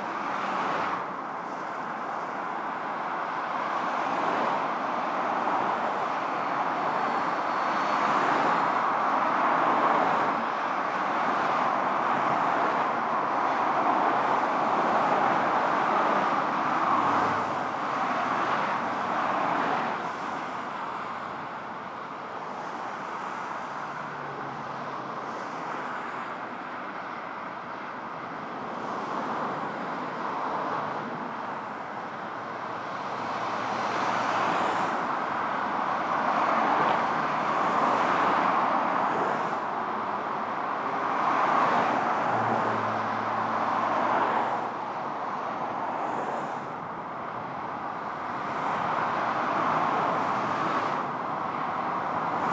Thorley Lane over Ringway Road
Lots of cars passing under
Ringway, Greater Manchester, UK, 30 September 2010, 18:07